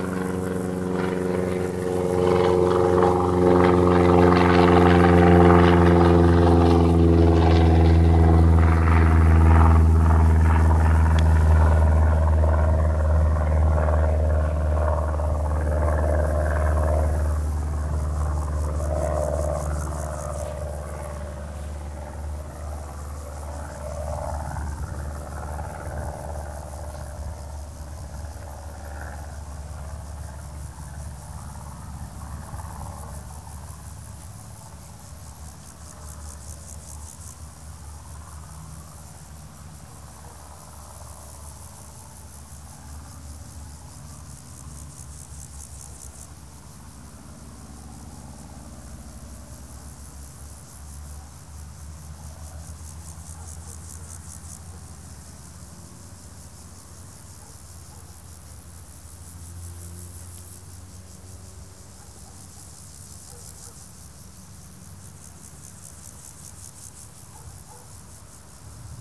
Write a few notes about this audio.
a small plane flying over the meadow. cricket chirping everywhere. some sounds of the city reach this place, inevitable traffic noise from a nearby street. dogs baying.